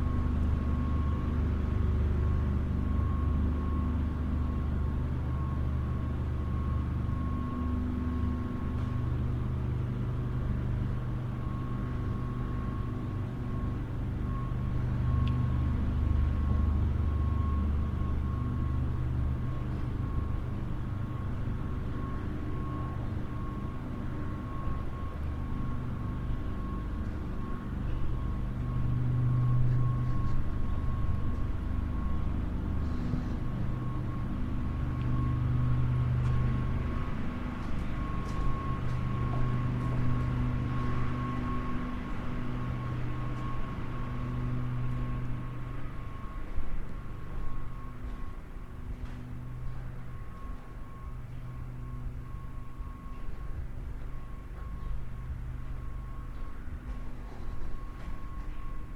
inside the old pump room - machines humming